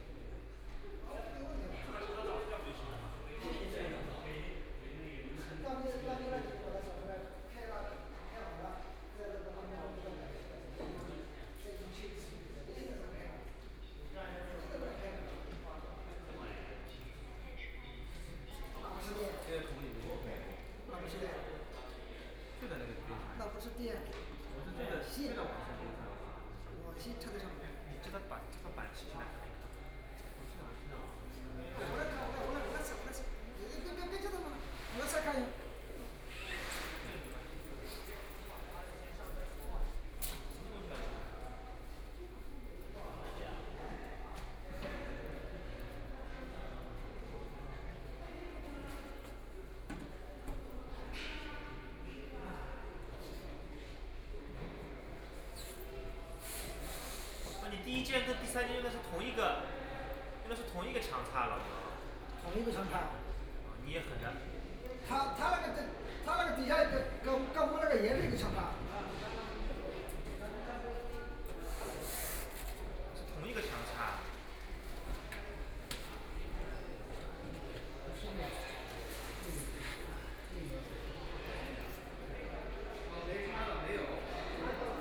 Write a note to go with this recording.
Voice conversations between staff, Construction workers are arranged exhibition, the third floor, The museum exhibition is arranged, Binaural recording, Zoom H6+ Soundman OKM II (Power Station of Art 20131201-1)